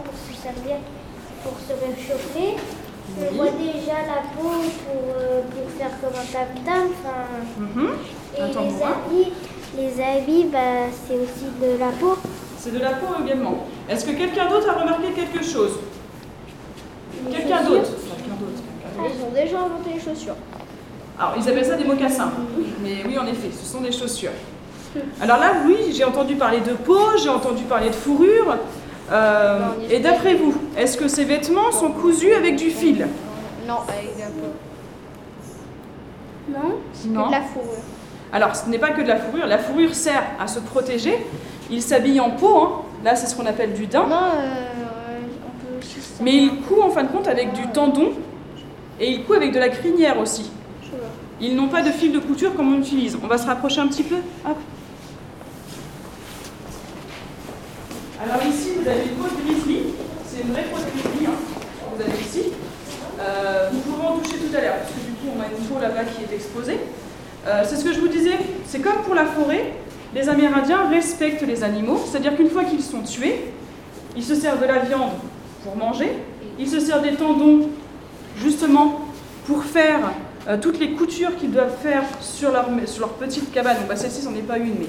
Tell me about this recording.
Visite des Muséales de Tourouvre avec des enfants